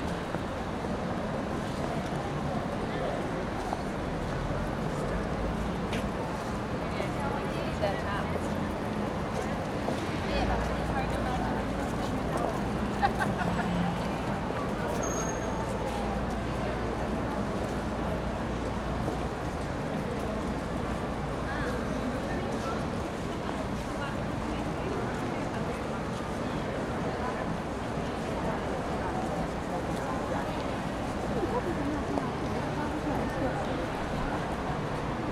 Barcelona, Avinguda del Portal de l´ Angel, vor dem El Corte Ingles mit der quietschenden Tür